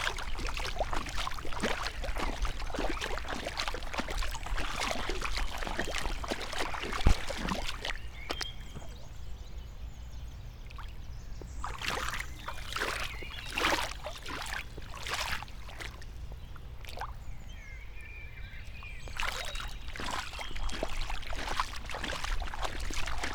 inside the pool, mariborski otok - whirl ... in pool